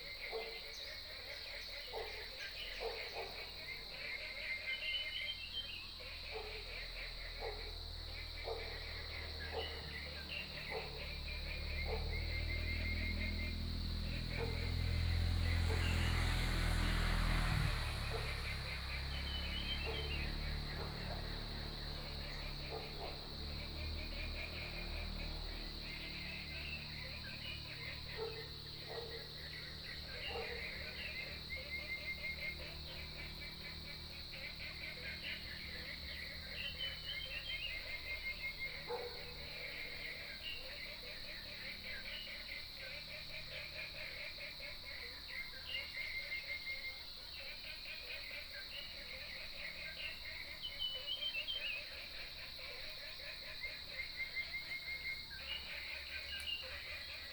種瓜路6號, Puli Township - Early morning
Bird calls, Frogs sound, Early morning, Dogs barking
Nantou County, Taiwan, 10 June 2015, ~05:00